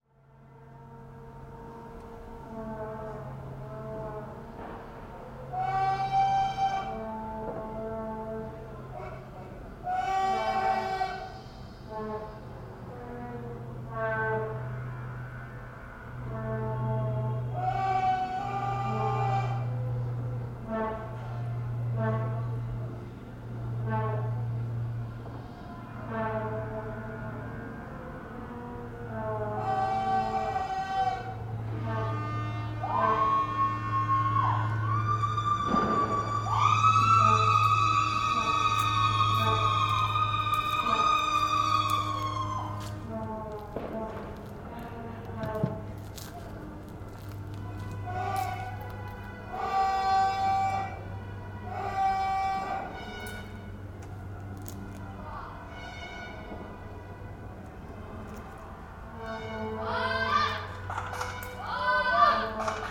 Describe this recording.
Vuvuzelas, shouts, screams and fireworks after Holland-Uruguay